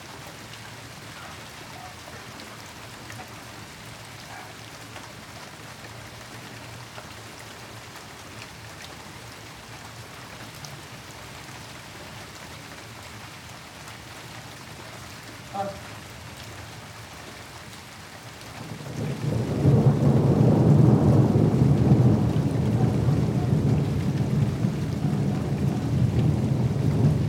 London, UK - Thunderstorm, 22nd July at 23:00